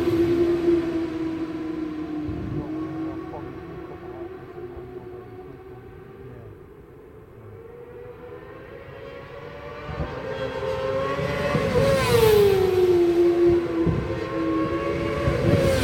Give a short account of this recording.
WSB 2003 ... Supersports ... free practice ... one point stereo to minidisk ... date correct ... time not so ..?